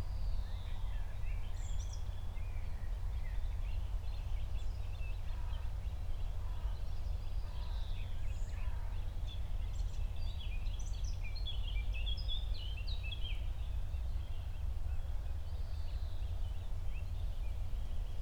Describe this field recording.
08:00 Berlin, Buch, Mittelbruch / Torfstich 1